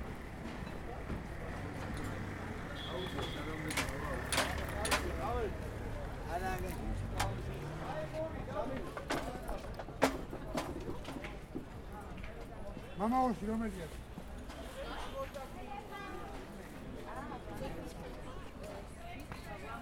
Abastumani St, Tbilisi, Georgia - Tbilisi Desertir Market
Walking through the Tbilisi Desertir open market in the morning with a Zoom H4N Pro, holding it horizontally pointing in front of me
Georgia / საქართველო, December 2019